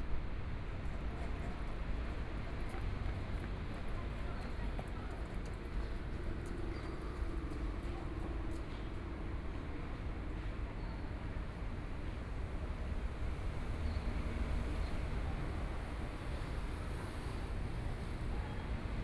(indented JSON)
{
  "title": "JiangNing Park, Taipei City - Morning in the park",
  "date": "2014-02-27 07:31:00",
  "description": "Morning in the park, Traffic Sound, Environmental sounds, Birdsong\nBinaural recordings",
  "latitude": "25.06",
  "longitude": "121.54",
  "timezone": "Asia/Taipei"
}